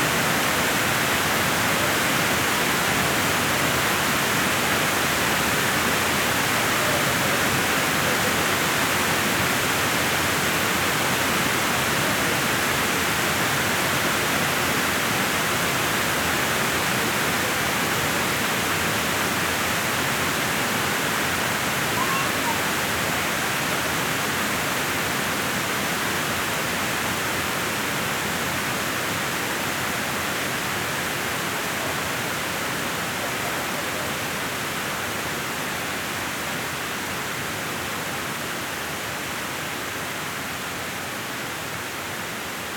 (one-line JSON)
{"title": "W 49th St, New York, NY, USA - Waterfall Tunnel, NYC", "date": "2022-08-23 16:30:00", "description": "Sounds from the Mini Plexiglass Waterfall Tunnel in Midtown.", "latitude": "40.76", "longitude": "-73.98", "altitude": "19", "timezone": "America/New_York"}